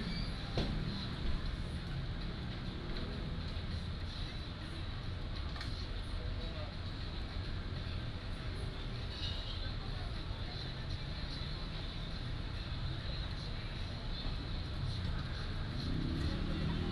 Guangming Rd., Magong City - in the Street
in the Street, Traffic Sound, The crowd, In front of the temple
Penghu County, Taiwan